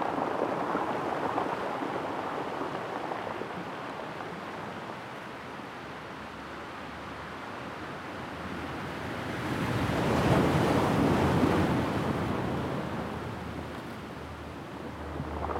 Recorded with a Sound Devices 702 field recorder and a modified Crown - SASS setup incorporating two Sennheiser mkh 20 microphones.